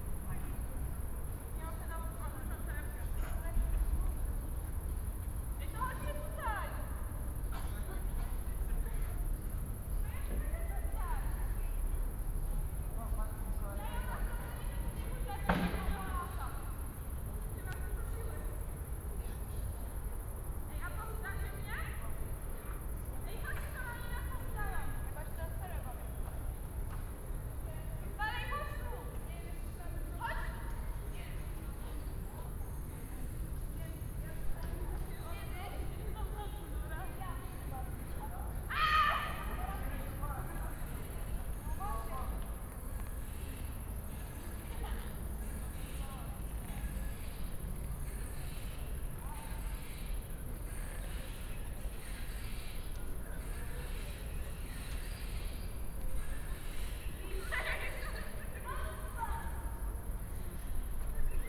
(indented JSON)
{
  "title": "Poznan, Sobieskiego housing complex - among the high apartment blocks",
  "date": "2019-08-11 21:27:00",
  "description": "(binaural recording) quiet summer evening ambience among the high apartment buildings (13 floors) of Sobieskiego housing complex. a group of cheerful teenagers approaching and passing right by the mics. then talking loudly on a nearby playground. ((roland r-07 + luhd PM-01 bins)",
  "latitude": "52.47",
  "longitude": "16.91",
  "altitude": "103",
  "timezone": "Europe/Warsaw"
}